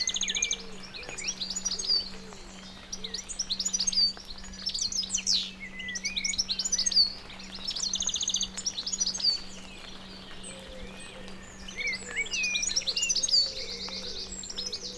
Dartington, Devon, UK - soundcamp2015dartington goldfinch